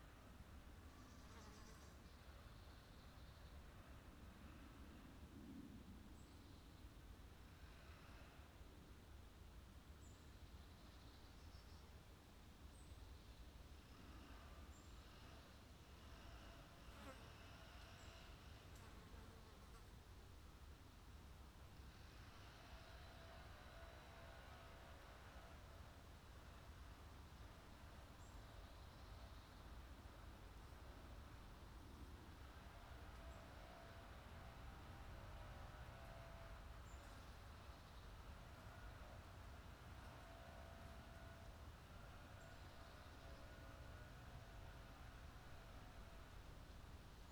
Park Sorghvliet, Den Haag, Nederland - Park Sorghvliet (1/2)
Binaural recording in Park Sorghvliet, The Hague. A park with a wall around it. But city sounds still come trough.